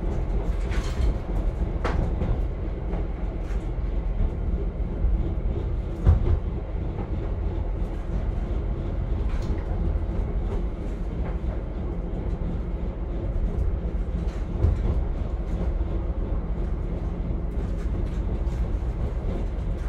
Narrow Gage Train Upper Silesia Poland